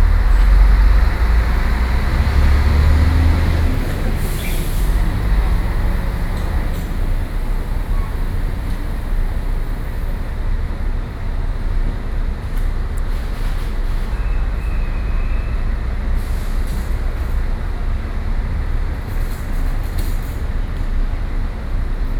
龜山區公西里, Taoyuan City - Outside the hospital
Outside the hospital
Sony PCM D50+ Soundman OKM II
Guishan District, Taoyuan City, Taiwan, 4 July 2012, ~08:00